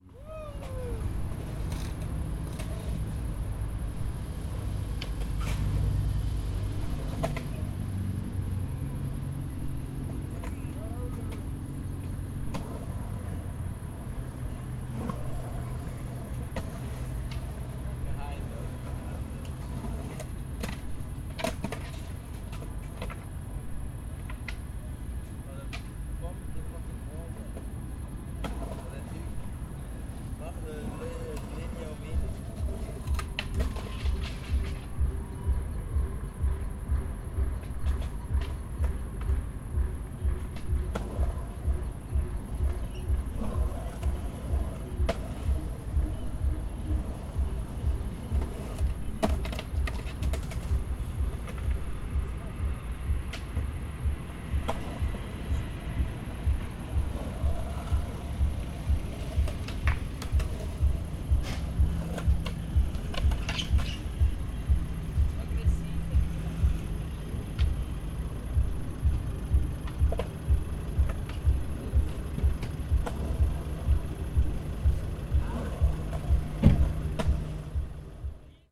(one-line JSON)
{"title": "Escher Wyss, Zürich, Sound and the City - Sound and the City #13", "date": "2012-07-18 20:40:00", "description": "Mischnutzung einer urbanen Brache: Freizeitverhalten (Zirkus, Skating-Park), Indivualverkehr (Entsorgung von Abfall), umgeben von Verkehrs- und Naturgeräuschen (Grillen). An der stadtseitigen, der Pfingstweidstrasse zugewandten Ecke der Stadionbrache Hardturm befindet sich eine freistehende Betonwand. Sie dämmt und streut die Verkehrsgeräusche der vielbefahrenen Strasse. In den Vordergrund geraten die Geräusche einer Skating-Anlage. Im Hintergrund sind Musik und Publikumsgeräusche eines temporär angesiedelten Zirkus zu hören: Ein Auto fährt heran, um Müll zu entsorgen, die Tür wird zugeschlagen. Es entsteht eine stark schizophonisch eingefärbte Atmosphäre.\nQuellen: Auto, Strassenlärm, Musik, Applaus, Skateboard, Stimmen, Rufe, Grillen\nSonic Effects: anamnesis, drone, metamorphosis, repetition, schizophonia\nArt and the City: Karsten Födinger (Untitled, 2012)", "latitude": "47.39", "longitude": "8.51", "altitude": "401", "timezone": "Europe/Zurich"}